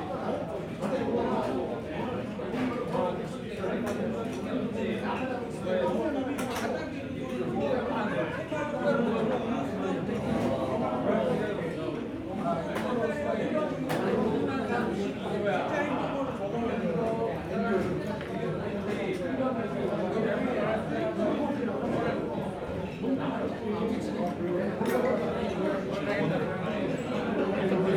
Yangjae Dakjip(chicken center), interior noise, people eating & drinking
양재닭집, 내부 소음
서울, 대한민국